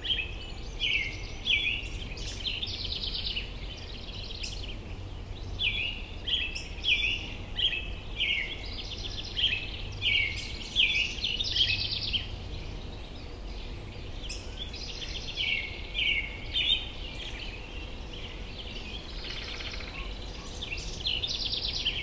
Oak Island, Sauvie Island OR, USA - Oregon White Oak Savanna, Sauvie Island
Dusk recording of an Oregon White Oak Stand on Sauvie Island. Recording at the edge of where a Roemer's fescue meadow meets a denser stand of oaks. Evening wind rustles oak leaves. Black-headed Grosbeak, Bewick's Wren sing, Woodpecker (Northern Flicker?) drums.
Recording using Jecklin Disk with Schoeps MK2 omni capsules into a Nagra Seven recorder.